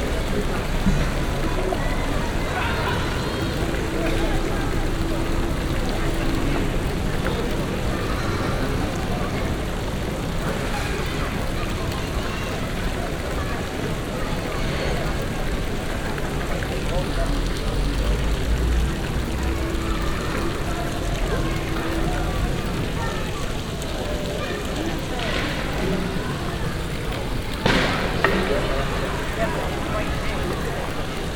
paris, rue brisemiche, stravinsky fountain
the famous jean tinguely - stravinsky fountain recorded in october 2009, unfortunalely half of the fountain objects are not working any more. in the background skater and tourist crowd
international cityscapes - social ambiences and topographic field recordings